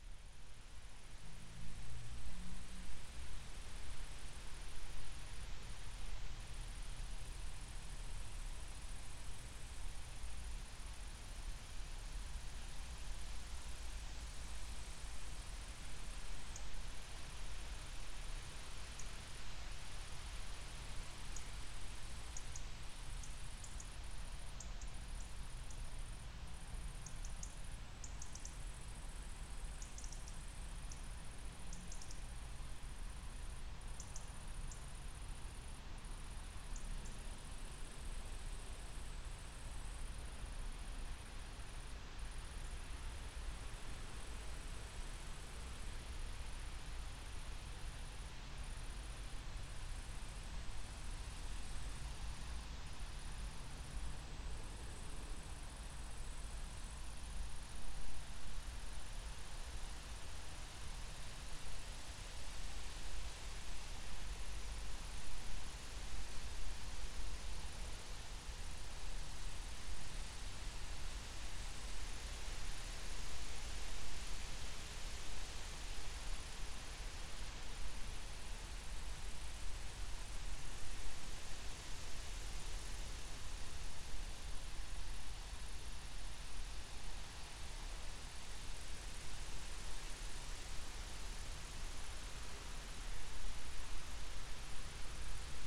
Utena, Lithuania, at abandoned fountain
soundscape at abandoned fountain
8 July 2018, ~18:00